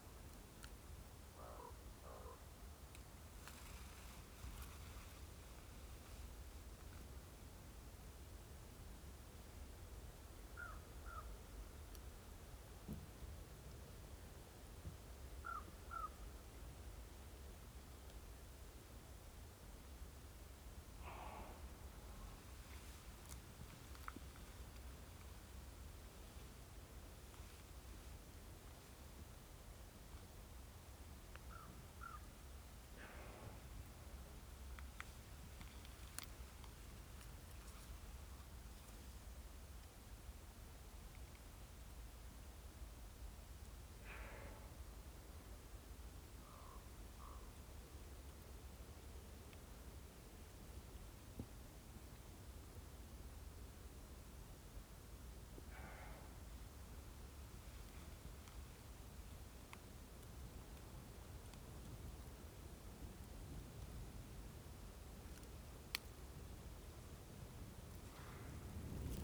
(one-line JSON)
{"title": "Beluga whales taking breaths in the fjord 64M5+9P L'Anse-de-Roche, QC, Canada - Beluga whales taking breaths", "date": "2021-10-26 11:46:00", "description": "The sounds of beluga whales surfacing to breathe as heard in the autumn forest high above the fjord. Two ravens pass by overhead. 3 of us watched and listened shuffling a little in the dry red and brown leaves underfoot. Such a peaceful spot and a very effecting experience. Sometimes the whales could be seen very briefly as they breached and disappeared.", "latitude": "48.23", "longitude": "-69.89", "altitude": "22", "timezone": "America/Toronto"}